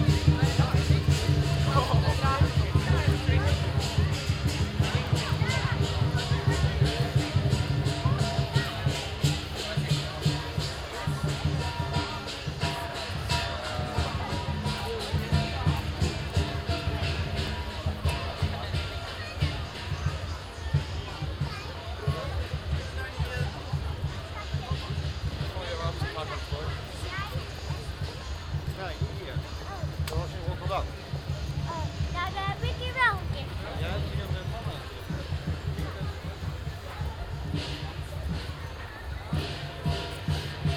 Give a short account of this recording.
On January 28th, 2017 began the Chinese New Year, the year of the Rooster. Which is always celebrated in The Hague's Chinatown. Binaural Recording